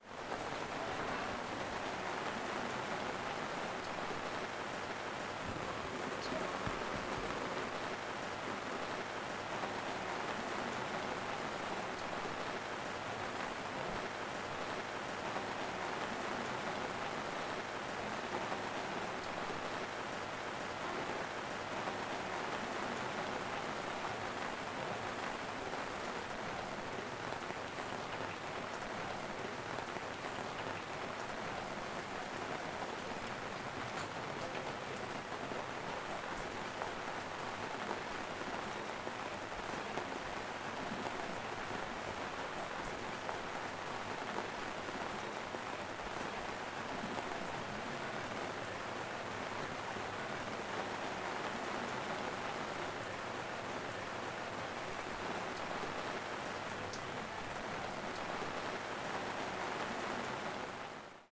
Unnamed Road, Tinos, Greece - Rain
Listening to the rain fall on the village of Volax. Recorded with Zoom by the soundscape team of E.K.P.A. university for KINONO Tinos Art Gathering.
26 July 2018, 13:00